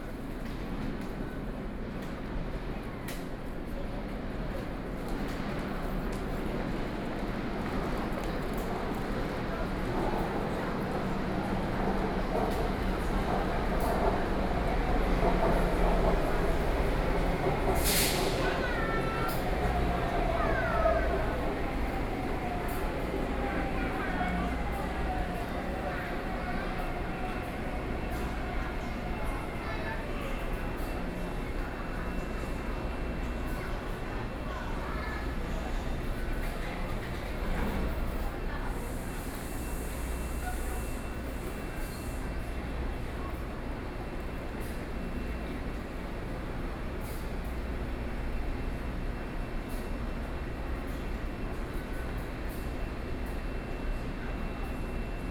{"title": "Dingxi Station, New taipei City - MRT platform", "date": "2012-09-29 13:50:00", "description": "MRT platform, Waiting for the train, Sony PCM D50 + Soundman OKM II", "latitude": "25.01", "longitude": "121.52", "altitude": "19", "timezone": "Asia/Taipei"}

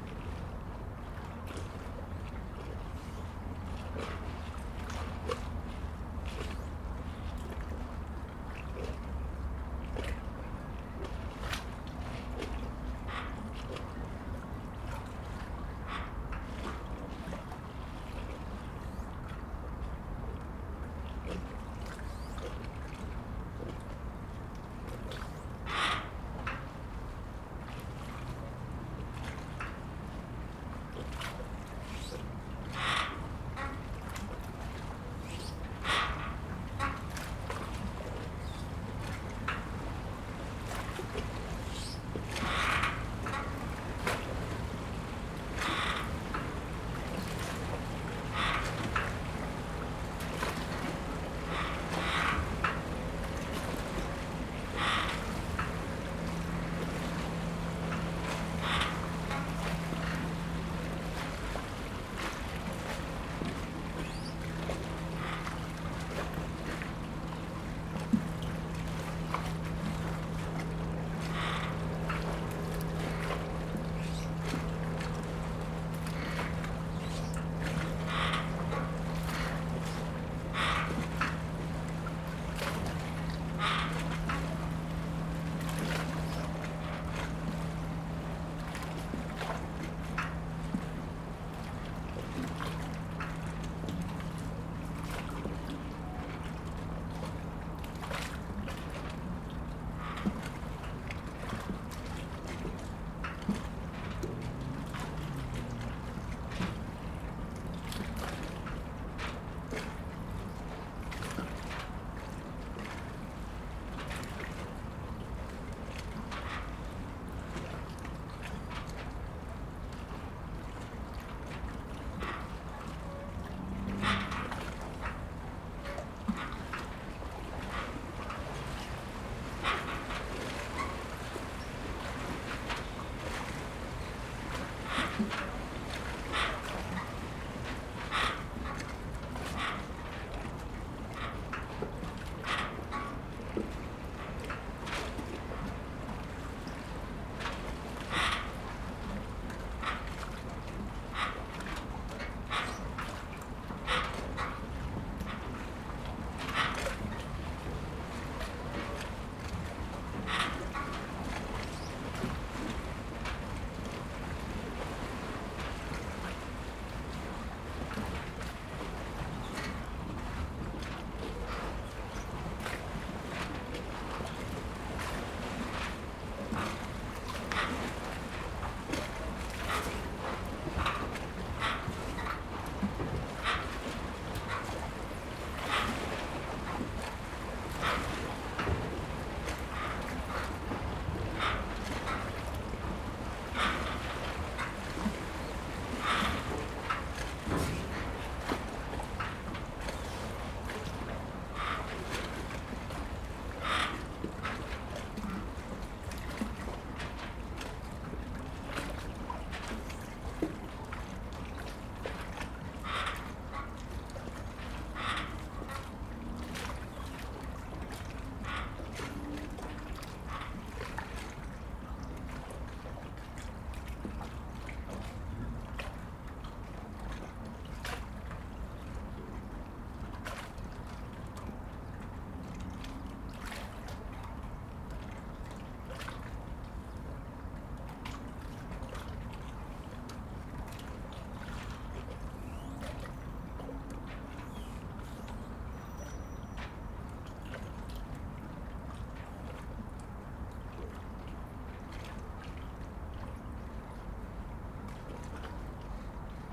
{"title": "대한민국 서울특별시 서초구 잠원동 121-9 - Jamwon Hangang Park, Dockside Metal Squeak", "date": "2019-10-13 20:03:00", "description": "Jamwon Hangang Park, Dockside Metal Squeak\n잠원한강공원, 선착장", "latitude": "37.52", "longitude": "127.01", "altitude": "11", "timezone": "Asia/Seoul"}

